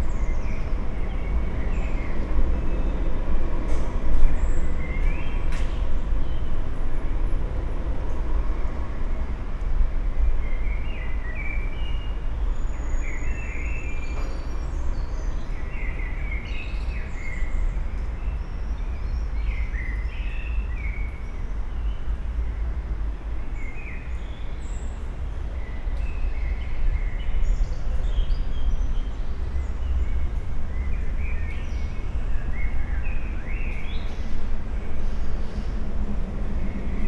resonances and traffic sounds below Pont Bleue
(Olympus LS5, PrimoEM172)